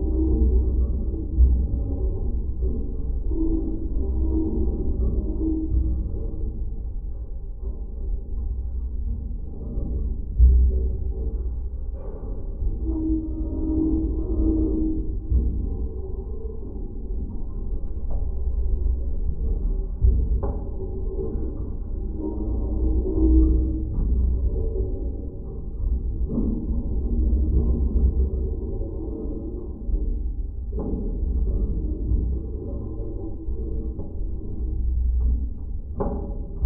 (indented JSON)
{
  "title": "Antakalnis, Lithuania, construction for wind turbine",
  "date": "2020-06-01 10:15:00",
  "description": "some tall metallic construction for wind turbine (absent). geophone recording. what I love about lom geophone it is easy to attact to metal - geophone das neodymium magnet.",
  "latitude": "54.50",
  "longitude": "24.72",
  "altitude": "152",
  "timezone": "Europe/Vilnius"
}